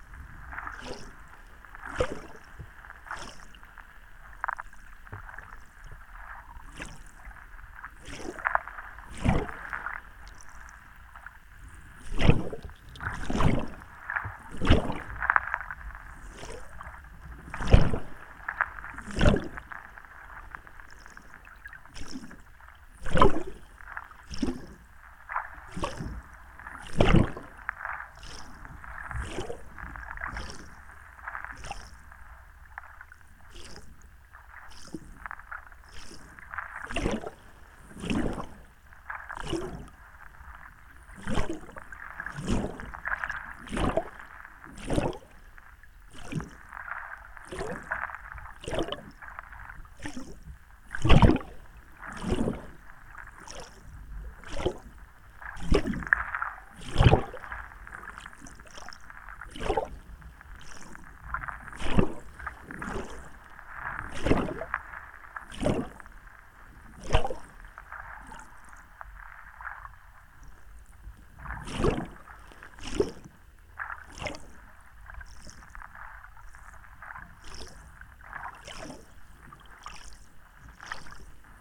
Kaunas, Lithuania, microphones in the beach sand
stereo hydrophone buried in the beach's sand and mono hydrophone in lagoon's water
Kauno miesto savivaldybė, Kauno apskritis, Lietuva, 19 August 2021, 14:10